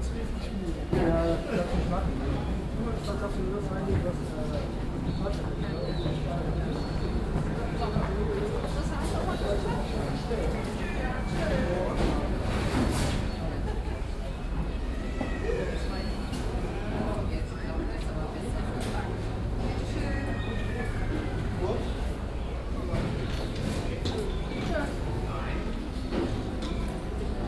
cologne -bonn, airport, check in - koeln-bonn, flughafen, check in
menschen und geräte am check in
project: social ambiences/ listen to the people - in & outdoor nearfield recordings